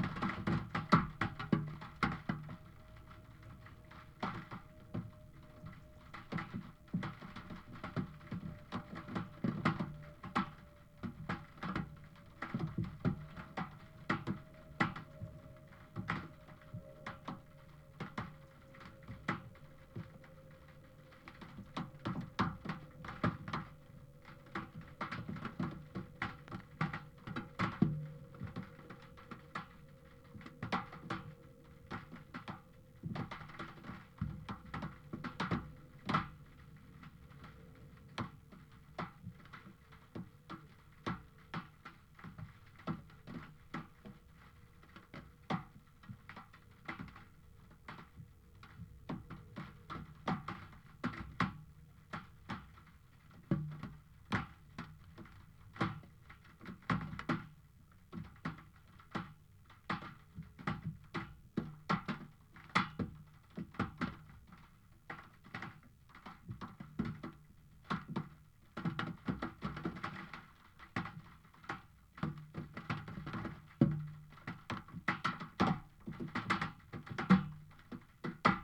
Punnetts Town, UK - Rain Drops Corrugated Iron Sheet
Following misty drizzly morning recorded rain drops falling onto abandoned corrugated iron sheet under hedge (also light rain and eerie sound of plane passing). Tascam DR-05 with homemade contact microphone.
Heathfield, UK, 1 January 2017, 13:10